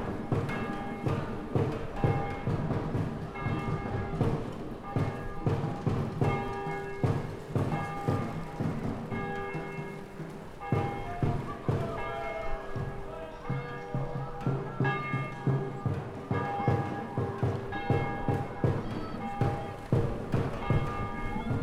Rabo_de_Peixe, kids talking, waves, plastic_percussion, voices, bell_church
Portugal, 30 October